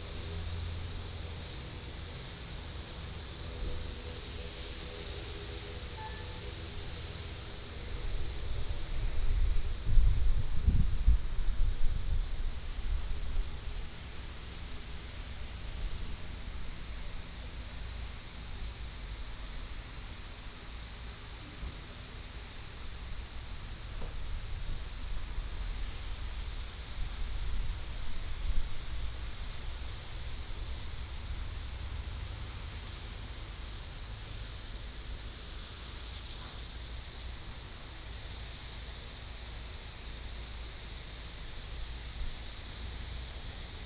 Taitung Airport, Taiwan - In the square outside the airport
In the square outside the airport
Taitung City, Taitung County, Taiwan, 30 October, ~12:00